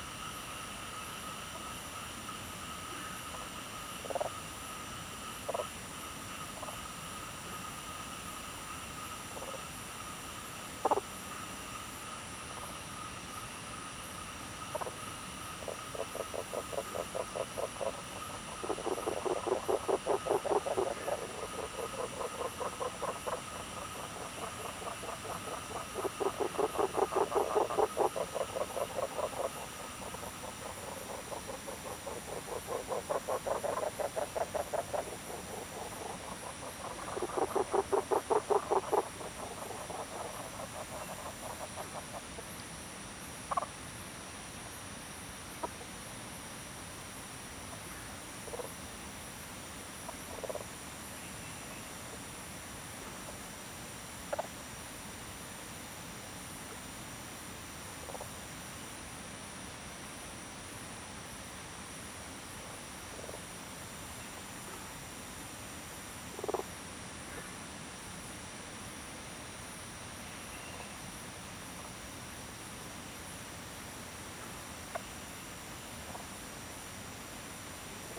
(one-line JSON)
{"title": "種瓜路桃米里, Puli Township, Taiwan - Frog Sound", "date": "2016-07-14 01:09:00", "description": "Stream, Frog Sound\nZoom H2n MS+XY", "latitude": "23.95", "longitude": "120.91", "altitude": "546", "timezone": "Asia/Taipei"}